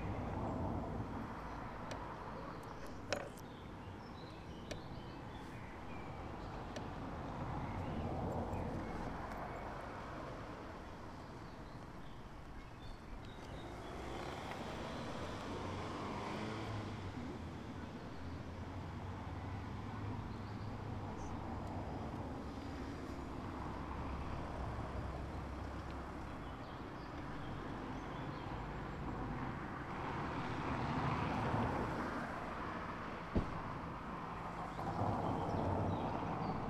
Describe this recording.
At the "Pförtnerhäuschen", Beelitz Heilstätten, former janitor's lodge, now an inn with very tasty asparagus dishes, as is the regional prime specialty.